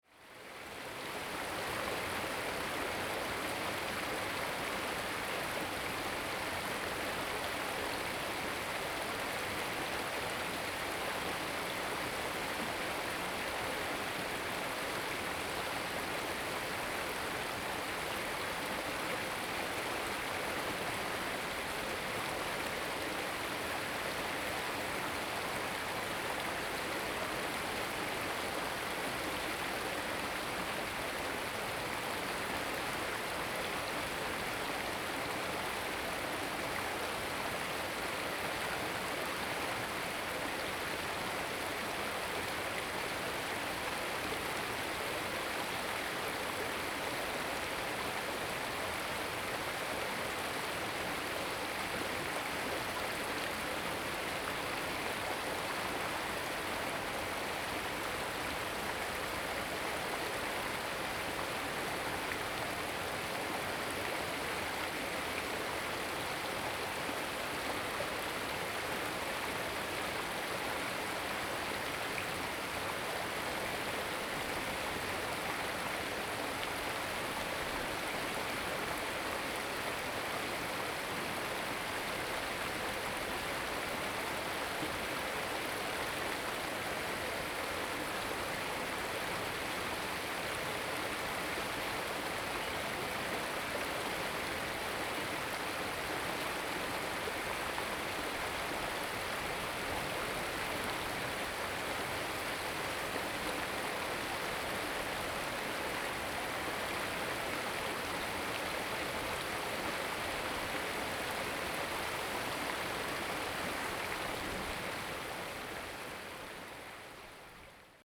Farmland irrigation waterways, The sound of water
Zoom H2n MS + XY
Taitung City, 東51鄉道